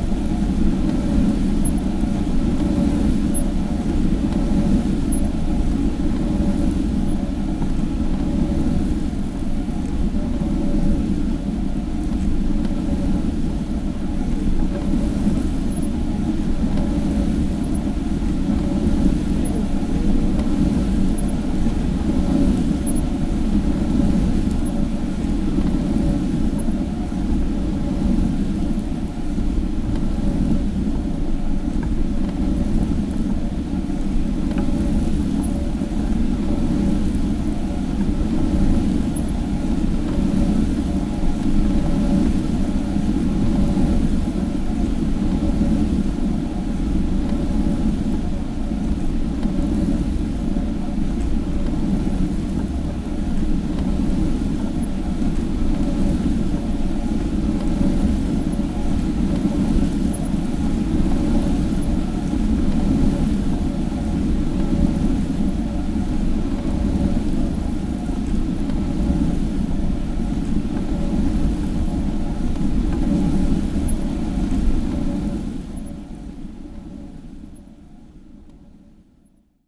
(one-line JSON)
{"title": "old grain mill in Panelia: john grzinich - panelia mill grinding wheel", "date": "2009-08-08 11:34:00", "description": "close up recording of the functioning grinding wheel at work inside the panelia village grain mill", "latitude": "61.23", "longitude": "21.97", "altitude": "38", "timezone": "Europe/Helsinki"}